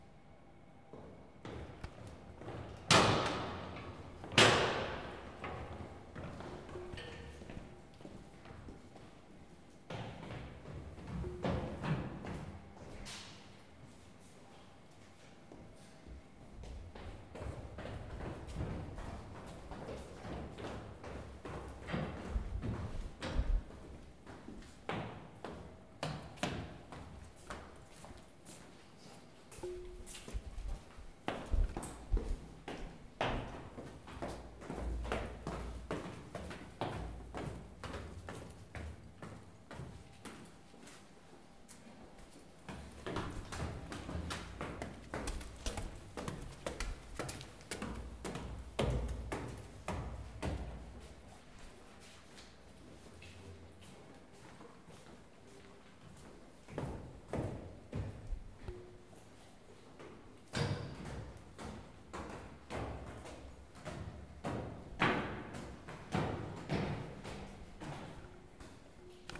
Dpto. Prehistoria y Arqueología - Facultad de Filosofía y Letras, 28049 Madrid, España - Faculty stairs
In this audio you can hear people going up and down the faculty stairs. The materials with which the stairs have been constructed, have made the stairs produce a sound that has become a characteristic sound of this faculty.
Gear:
Zoom h4n
- Cristina Ortiz Casillas
- Erica Arredondo Arosa
- Carlos Segura García
- Daniel Daguerre León